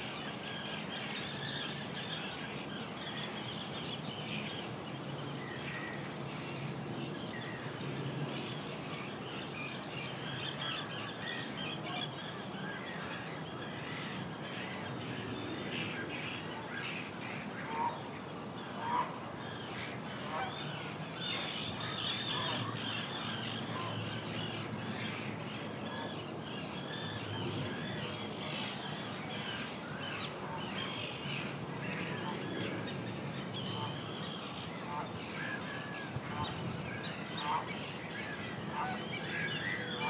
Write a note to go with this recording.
Recording on Roland R44-e + USI Pro in Lea Valley Park, the geese and seagulls were only really audible from this location due to the trees and their distance, the hide provided a clearing and a good listening position.